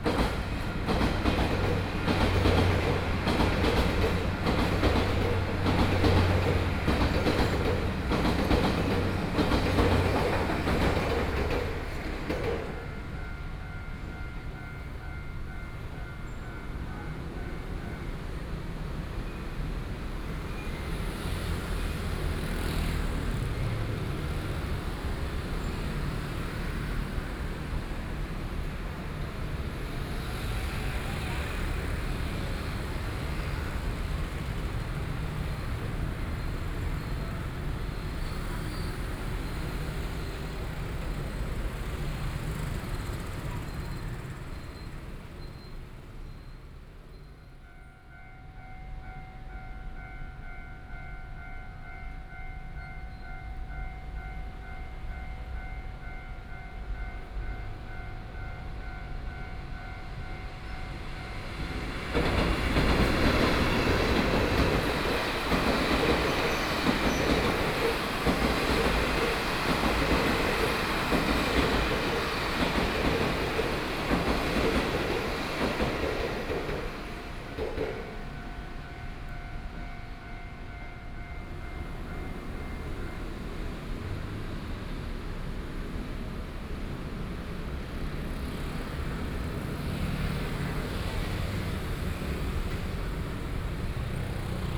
Donggang Road, Yilan City - Train traveling through
Train traveling through, Standing beside the railroad crossing, Binaural recordings, Zoom H4n+ Soundman OKM II